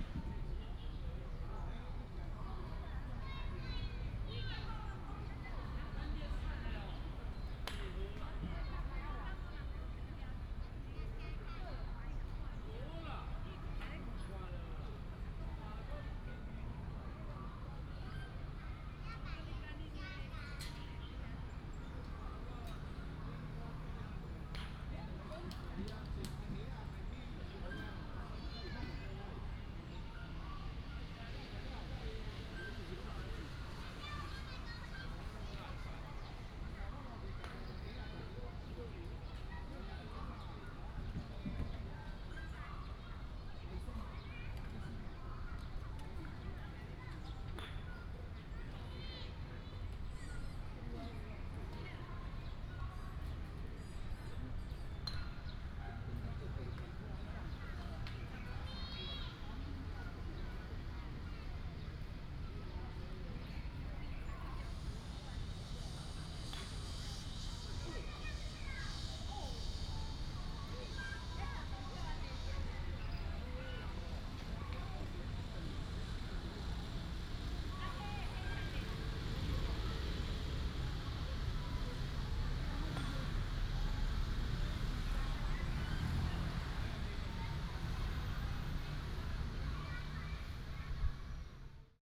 Wenzhou Park, Taoyuan Dist. - in the Park
Children's play area, Croquet, birds sound, traffic sound